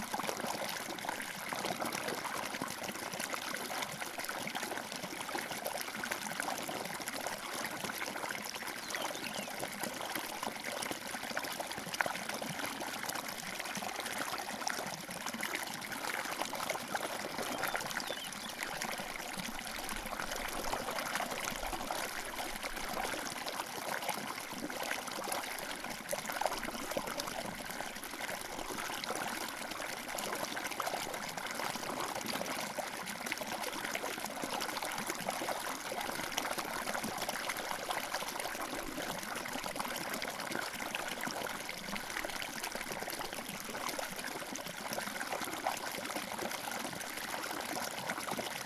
La Calera, Cundinamarca, Colombia - Colibries y varios pàjaros cantando.
En una Ericacea se reunen carias aves en sus perchas.